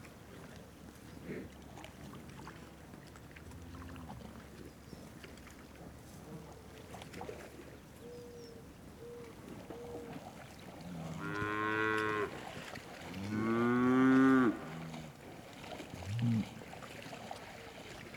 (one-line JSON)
{"title": "Arivaca Desert (Arizona) - Cows’ choir around a pound in the desert", "date": "2021-08-16 12:00:00", "description": "Cattle is drinking and swimming while it’s hot in the desert of Arizona, in the area of Arivaca.\nRecorded by a Sound Devices MixPre6\nWith a ORTF Schoeps Setup CCM4 x 2 in a windscreen by Cinela\nSound Ref: AZ210816T001\nRecorded on 16th of August 2021\nGPS: 31.661166, -111.165792", "latitude": "31.66", "longitude": "-111.17", "altitude": "1120", "timezone": "America/Phoenix"}